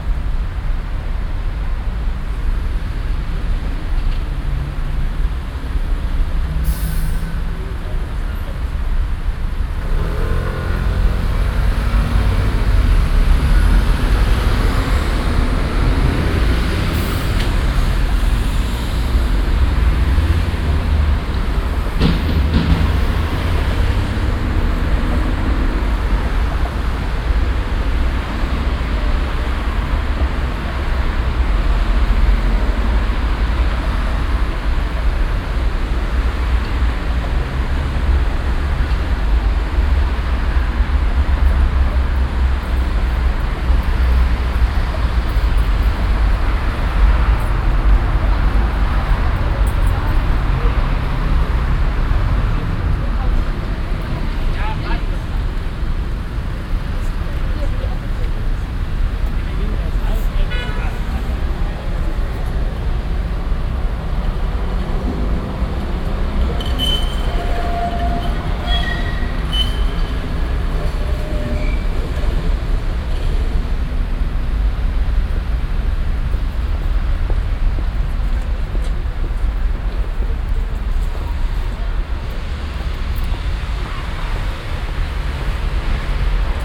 cologne, barbarossaplatz, verkehrszufluss neue weyerstrasse - cologne, barbarossaplatz, verkehrszufluss neue weyerstrasse 02
strassen- und bahnverkehr am stärksten befahrenen platz von köln - aufnahme: nachmittags
soundmap nrw: